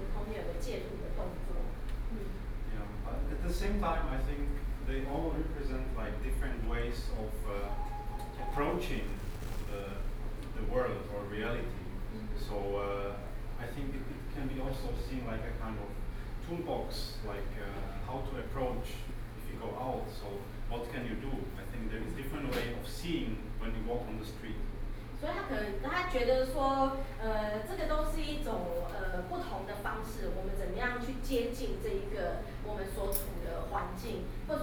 tamtamART.Taipei, Taipei City - openning
Exhibition Opening, Artists are introducing his own creations, Sony PCM D50 + Soundman OKM II